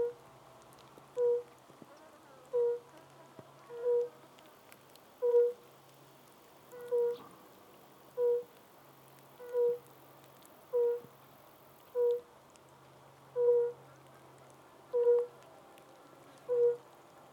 Pakalnės, Lithuania, European fire-bellied toads in small pond. Drizzling rain.
Utenos apskritis, Lietuva, 10 July 2022, ~18:00